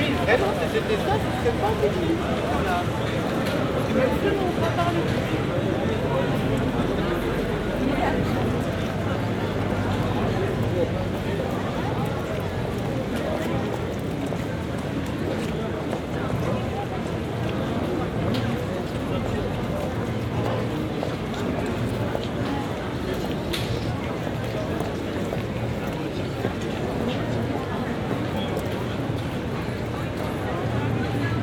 Istanbul Soundscape, Sunday 16:40 Tunel
soundscape on Sunday at 16:40 Tunel, for New Maps of Time workshop
14 February 2010, 23:01